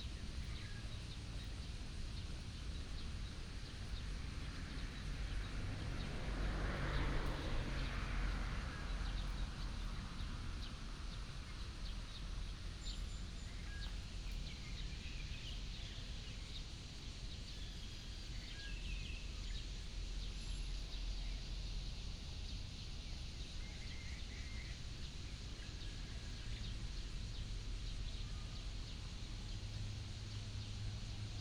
龍崗綜合訓練場, Zhongli Dist., Taoyuan City - Disabled military training ground
Disabled military training ground, Birds sound, traffic sound
Taoyuan City, Taiwan, July 2017